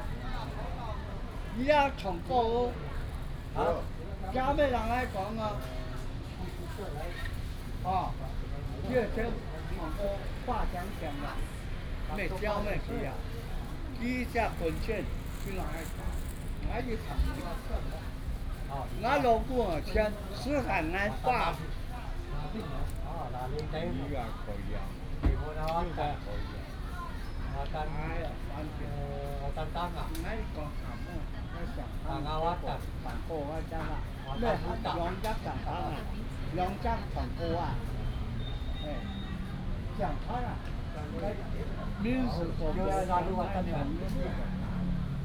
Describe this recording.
Talking voice of the elderly, Hakka language, Aboriginal language